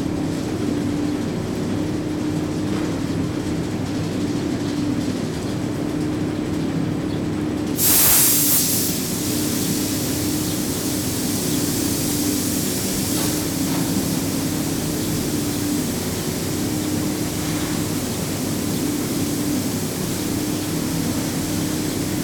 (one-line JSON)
{"title": "Brinchang, Pahang, Malaysia - drone log 21/02/2013 a", "date": "2013-02-21 12:20:00", "description": "Sungai Palas, Boh Tea production factory, tea processing\n(zoom h2, build in mic)", "latitude": "4.52", "longitude": "101.41", "altitude": "1490", "timezone": "Asia/Kuala_Lumpur"}